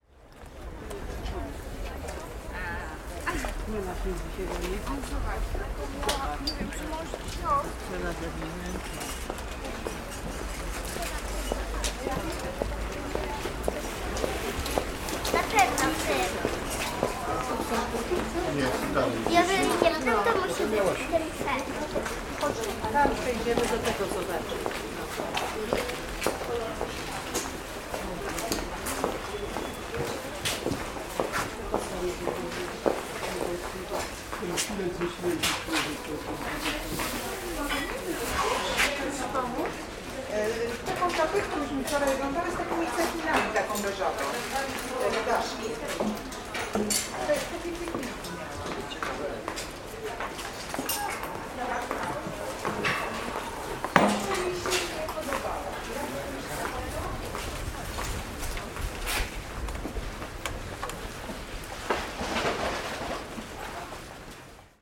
market hall, Torun Poland
sounds from the Torun market halls